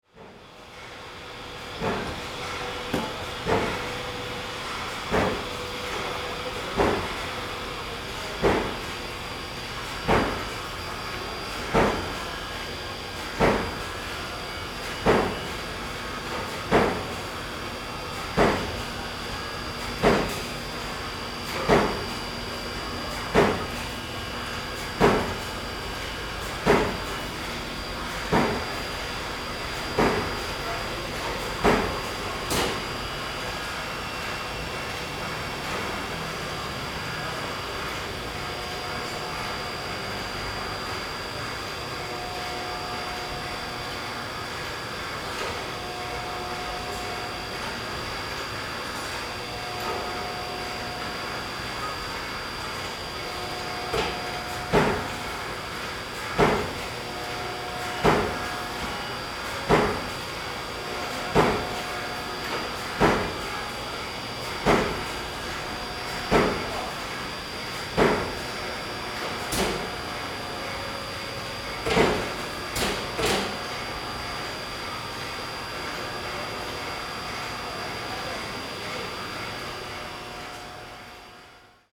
Aly., Ln., Zhongzheng Rd., Xinzhuang Dist. - Sound from Factory
Sound from Factory
Zoom H4n +Rode NT4
New Taipei City, Taiwan, 9 January 2012